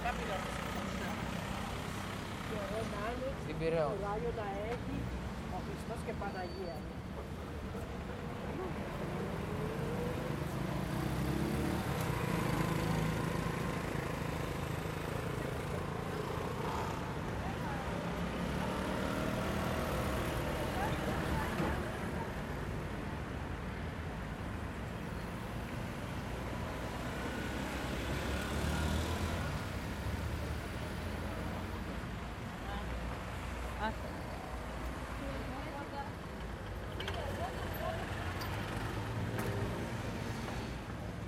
Μιχαήλ Καραολή, Ξάνθη, Ελλάδα - Mpaltatzi Square/ Πλατεία Μπαλτατζή 19:45
Mild traffic, people passing by, talking.